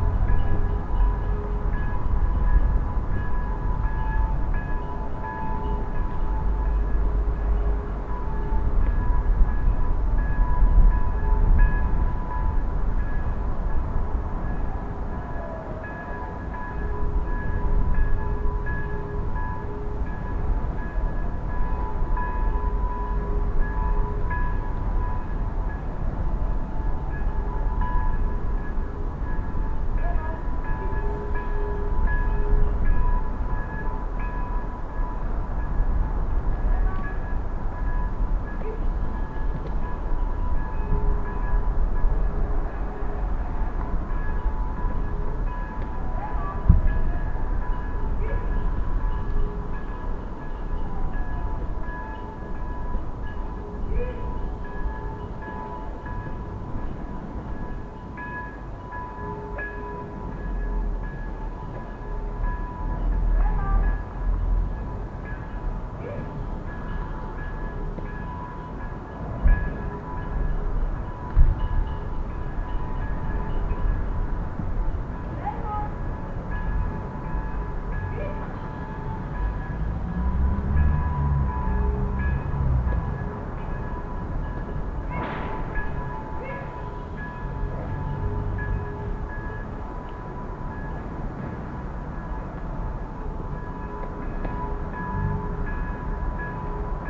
Binaural recording of city atmosphere on first Christmas Day.
Recorded with Soundman OKM on Sony PCM D-100